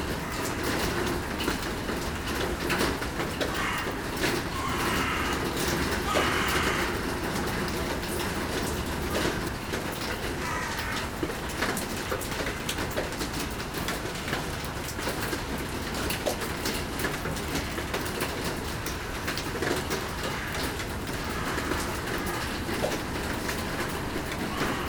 Seraing, Belgique - Rain and crows
In the abandoned coke plant, waiting in the tar and benzol section, while rain is falling. A lot of crows are calling and shouting. These birds love abandoned factories as it's very quiet, there's nobody.